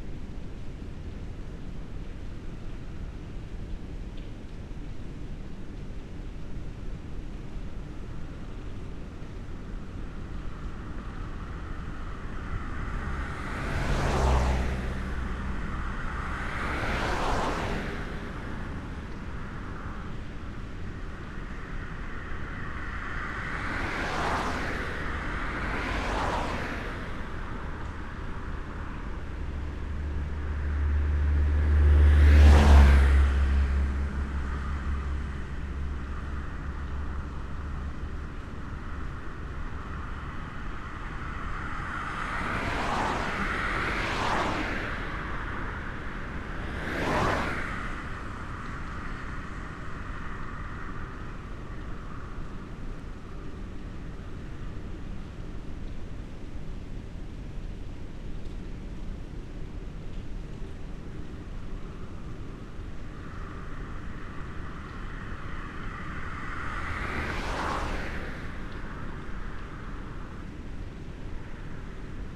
Soška cesta, Solkan, Slovenia - Road from Nova Gorica to Plave, near Solkan dam
Beside main road from Nova Gorica to Plave, near Solkan dam.
Recorded with Lom Uši Pro, Olson Wing array.
Slovenija, 8 October 2020, 10:04